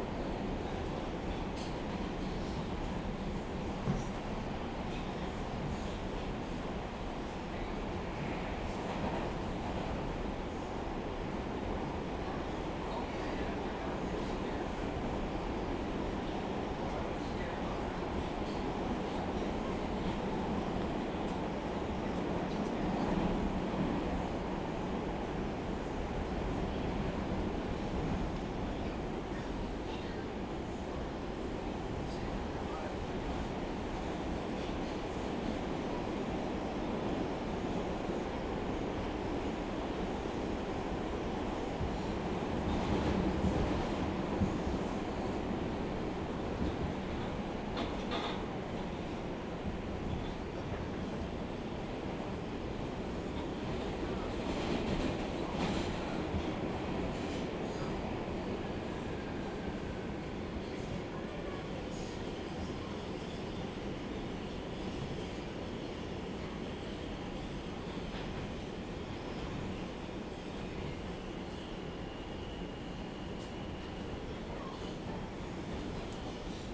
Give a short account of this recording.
NYC, metro train trip from grand central station to wall street; passengers, announcements, doors;